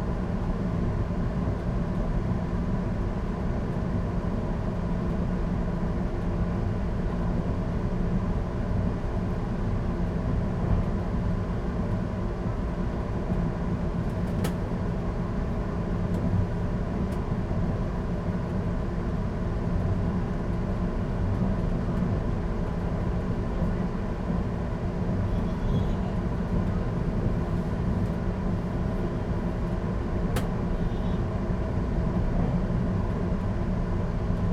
Guanshan Township, Taitung County - In train carriage
In train carriage, To Guanshan Station
Zoom H2n MS+ XY